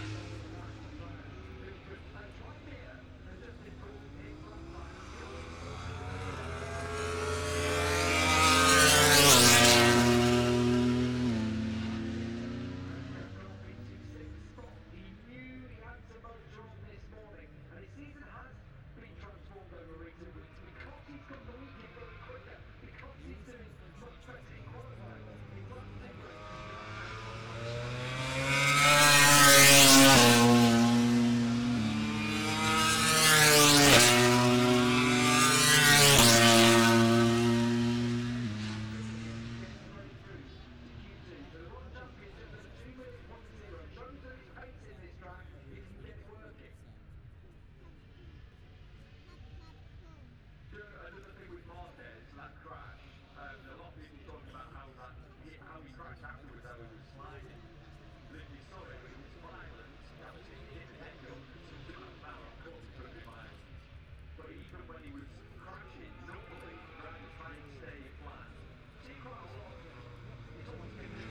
moto grand prix ... free practice three ... copse corner ... dpa 4060s to MixPre3 ...
East Midlands, England, United Kingdom, 28 August, 09:55